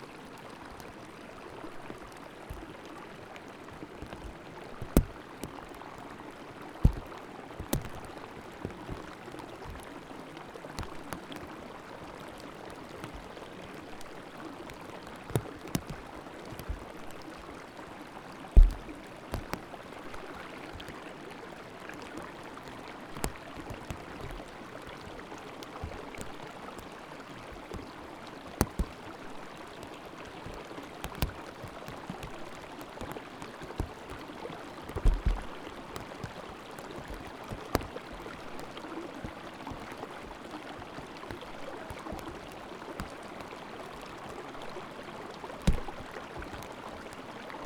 neoscenes: changing the course of nature